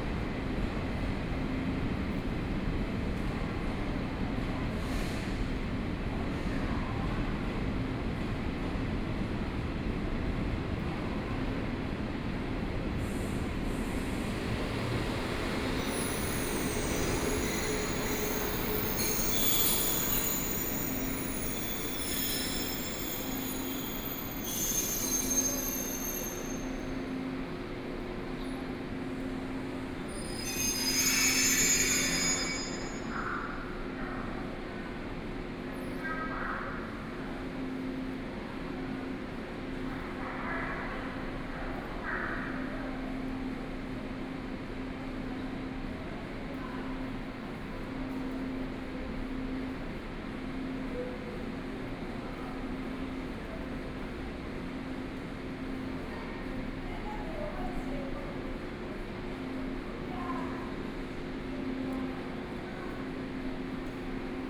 Sitting on the station platform waiting for the train, Station broadcast messages, More and more students appear, Binaural recordings, Sony PCM D50+ Soundman OKM II
Keelung City, Taiwan, 7 November 2013, 16:30